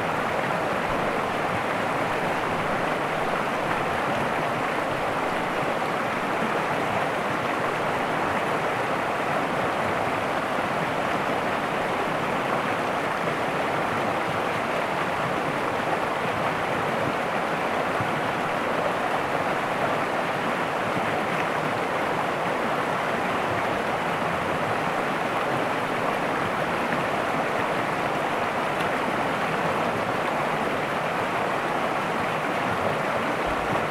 Chaos du Chéran, Sent. de la Passerelle, Cusy, France - Le Chéran
Au bord du Chéran la rivière des Bauges qui se jette dans le Fier à Rumilly. Débit minimum en cette période de sécheresse.
France métropolitaine, France, 4 August 2022